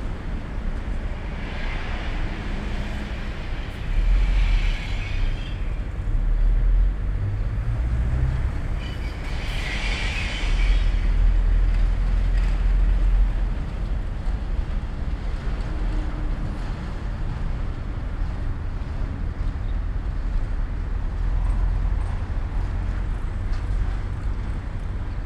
Oberhafen, Neukölln, Berlin - industrial ambience
industrial ambience at Oberhafen, Neukölln, between srapyard and public cleansing service building
(Sony PCM D50, DPA4060)